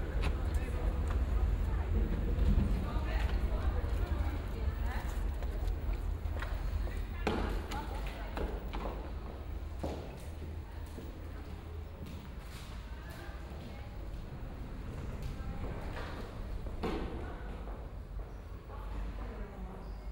Aarau, Townhall, Schweiz - Rathaus
The automatic door of the townhall, a dialogue inside, silence.